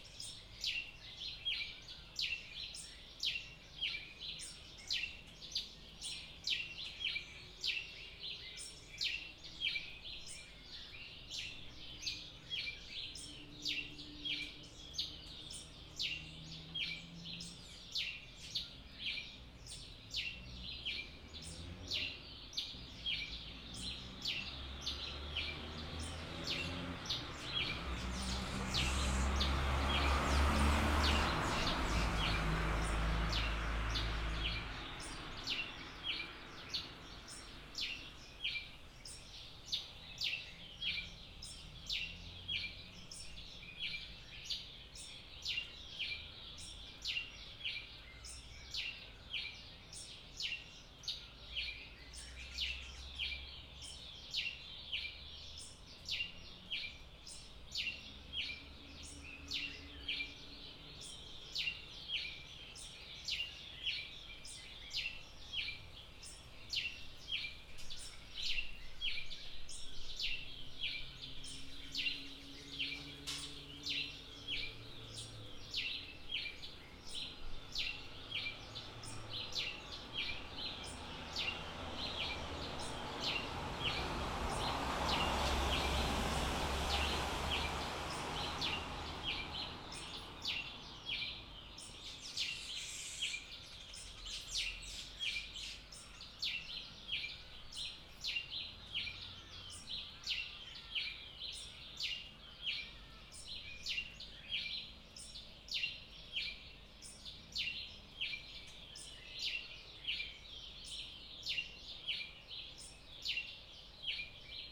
While waiting to go to Limpopo, South Africa to stay in a reserve for 2 weeks as part of Francisco Lopez's & James Webb's 'Sonic Mmabolela' residency I have been staying at the Wedgwood in Johannesburg. On my first night there I was exhausted from not getting any sleep on the 15 hour flight from Sydney, Australia so I went to bed at 8pm and woke up at 4am. And since I was up early I thought I would record the dawn chorus outside my room. I think it is mainly some species of weaver bird that is calling with some ravens in the background occasionally. I think!
The recording stars when it was pitch black and ends after the light as emerged.
Recorded with a pair of Audio Technica AT4022's and an Audio Technica BP4025 into a Tascam DR-680, with the two different mic set ups about a metre apart.
Johannesburg, South Africa, 15 November 2014, 04:35